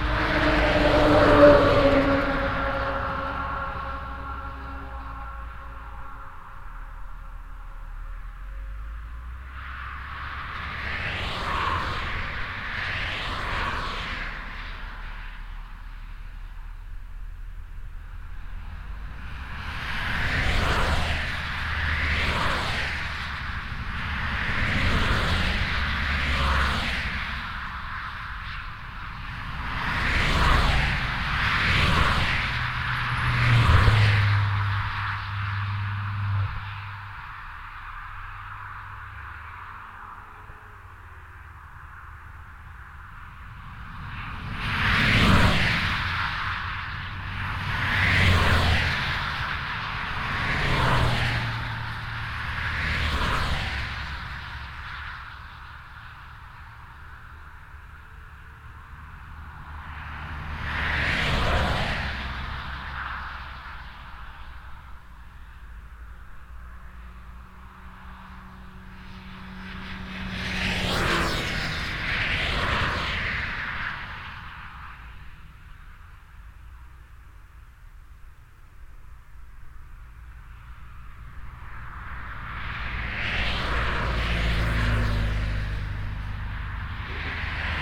Traffic on the highwaylike E 421. Recorded in spring in the early afternoon.
Projekt - Klangraum Our - topographic field recordings, sound art objects and social ambiences
Luxembourg, 2 June 2011